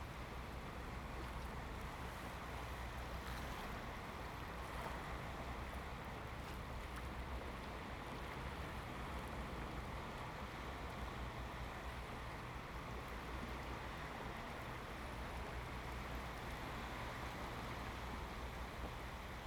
杉福村, Hsiao Liouciou Island - Waves and tides
Sound of the waves, Waves and tides
Zoom H2n MS +XY
Pingtung County, Taiwan, 1 November 2014